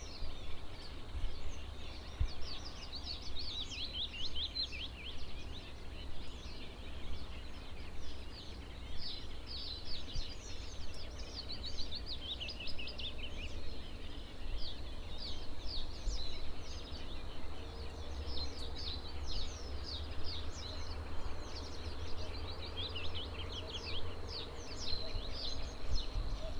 Srem, Puchalski's urban park near the hospital, swamps - swamps in the summer morning
recorder early morning, birds and insects were very active, but h4n mics didn't get the detalis as well all the stereo image. as if the air was trembling from all the noise. unfortunately inevitable car sounds in the background
Śrem, Poland, 4 July 2010